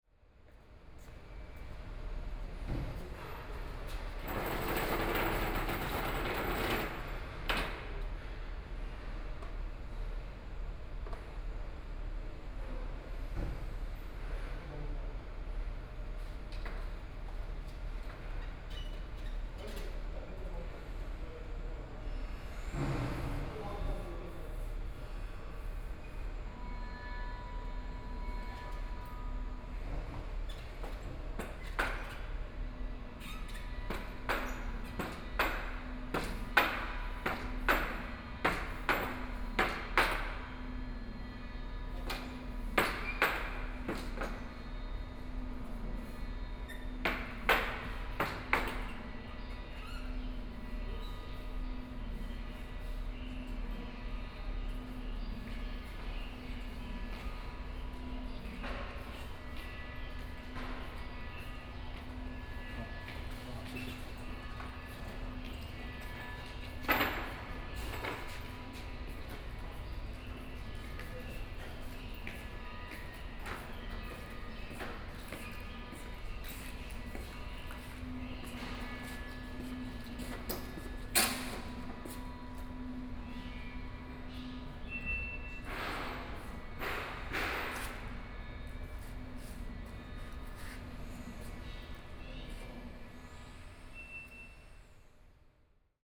Art Center, Kaohsiung City - Construction Exhibition
Construction Exhibition
Sony PCM D50+ Soundman OKM II